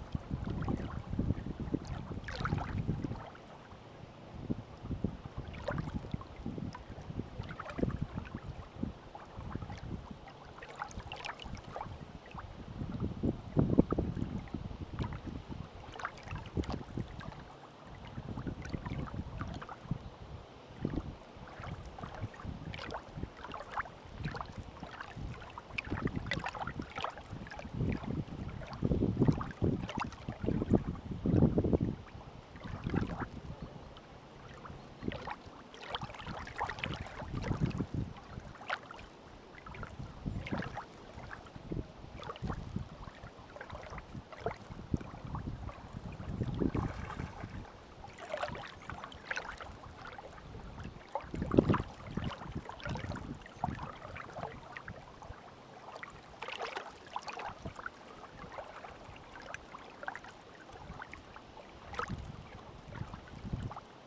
{"title": "Тульская обл., Россия - On the Bank of the Oka river", "date": "2020-09-13 12:30:00", "description": "On the Bank of the Oka river. You can hear the water gurgling and the wind blowing.", "latitude": "54.82", "longitude": "37.24", "altitude": "106", "timezone": "Europe/Moscow"}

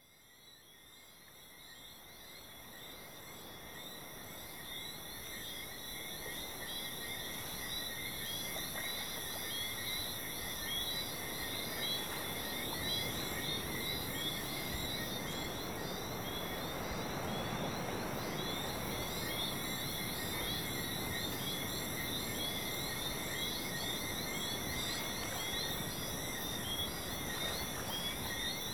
{"title": "Anse des Rochers, Saint-François, Guadeloupe - Beach, waves, insects & Frogs at night by J-Y Leloup", "date": "2020-12-03 19:00:00", "description": "At night, very close to the sea and its waves, a small wood, with insects & frogs", "latitude": "16.24", "longitude": "-61.31", "altitude": "15", "timezone": "America/Guadeloupe"}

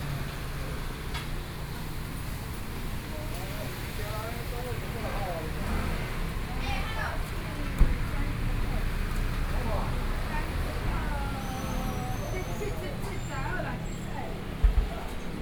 {"title": "Minsheng St., Yilan City - Traditional Market", "date": "2013-11-05 09:04:00", "description": "Walking in the traditional markets of indoor and outdoor, Binaural recordings, Zoom H4n+ Soundman OKM II", "latitude": "24.76", "longitude": "121.75", "altitude": "15", "timezone": "Asia/Taipei"}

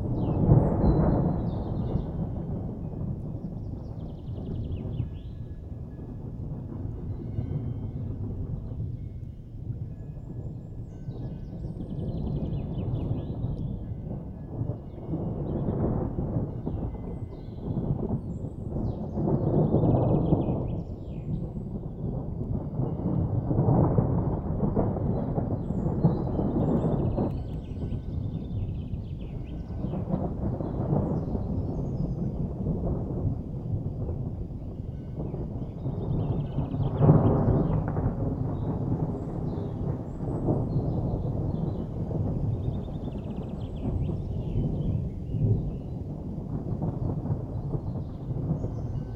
{"title": "Buchenberg, Deutschland - Donnergrollen", "date": "2005-06-29 19:17:00", "description": "Donnergrollen, kurzer Hagelschauer, Gebimmel von Kuhglocken, das Gewitter zieht ab. Kein Regen.", "latitude": "47.73", "longitude": "10.15", "altitude": "957", "timezone": "Europe/Berlin"}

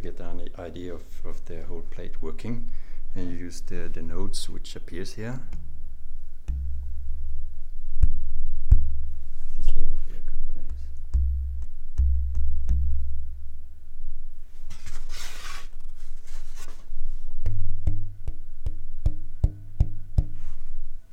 {"title": "Umeå. Violin makers workshop.", "date": "2011-02-28 13:56:00", "description": "Testing density. Description.", "latitude": "63.83", "longitude": "20.26", "altitude": "27", "timezone": "Europe/Stockholm"}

